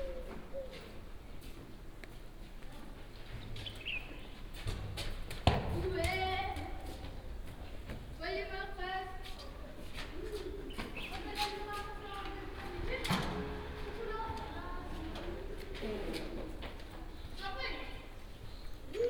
Arset Ben Chebi, Marrakesch, Marokko - kids playing in echoing street
kids play soccer in a narrow street, with a flattering echo
(Sony D50, OKM2)
Marrakesh, Morocco, 28 February 2014